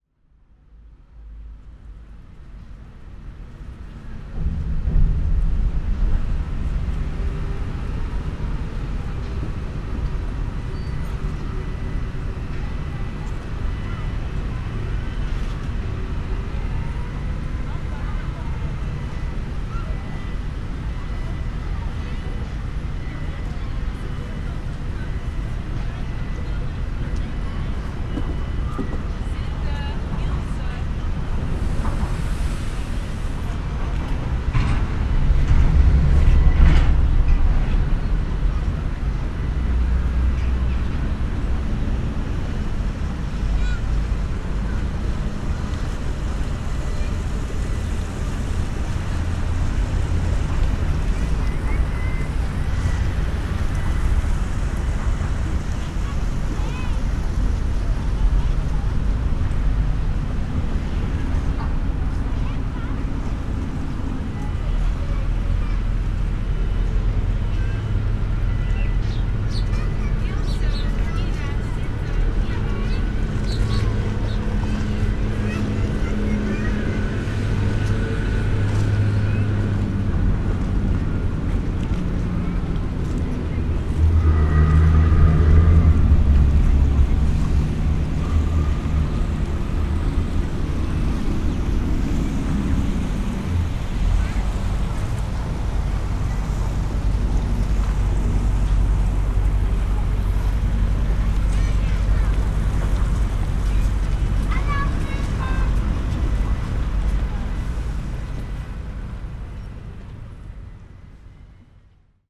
Binckhorst, Laak, The Netherlands - Thursday harbour ambience
A work day in the harbour: traffic, machine at work, a kindergarten, birds..
Binaural recording (dpa4060 into fostex FR2-LE).
Binckhorst Mapping Project.